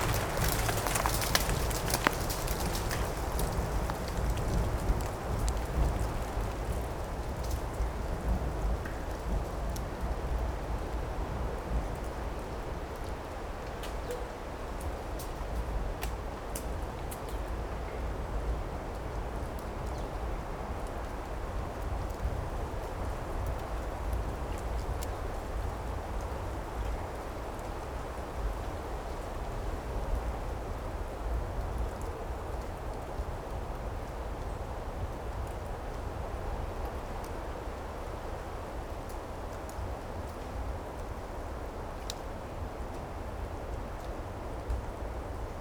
recorded on one of the paths in Morasko forest. Church bells coming form Suchy Las town, a truck climbing forest road made of concrete slabs, rumbling with its iron container, gusts of wind bring down a shower of branches and acorns. (roland r-07 internal mics)
Suchy Las, Poland